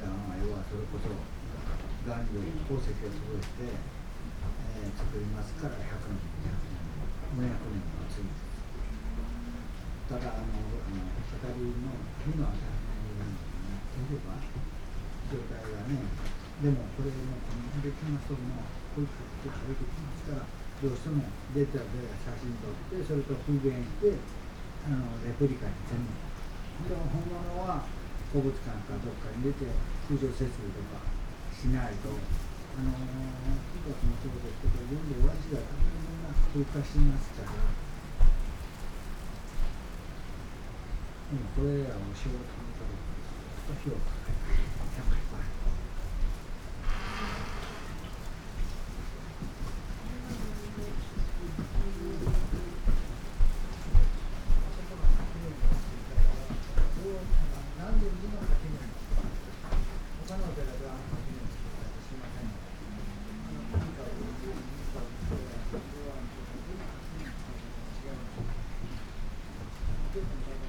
wooden corridor with sliding doors, squeaking wheelchair, people, rain
gardens sonority